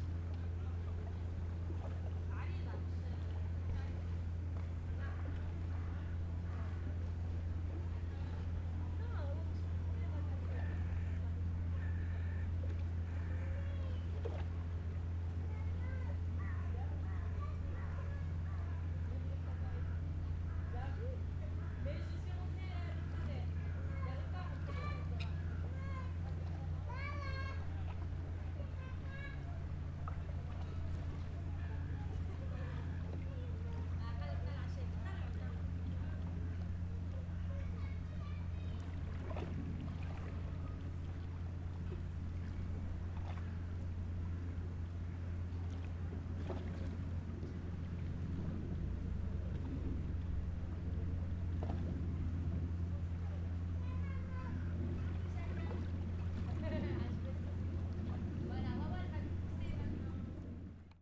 Schifffe am Genfersee vor der Winterpause
Lausanne, Switzerland, 2 October, 18:40